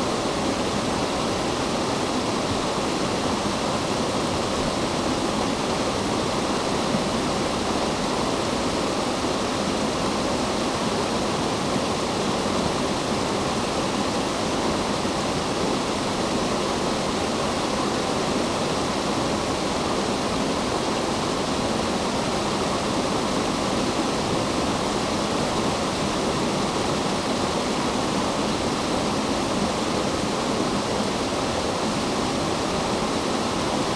Lindrick with Studley Royal and Fountains, UK - The Cascade ...
The Cascade ... Studley Royal Water Gardens ... lavalier mics clipped to sandwich box ... warm sunny afternoon ... distant Canada geese ...
Ripon, UK, 15 September 2016, 14:00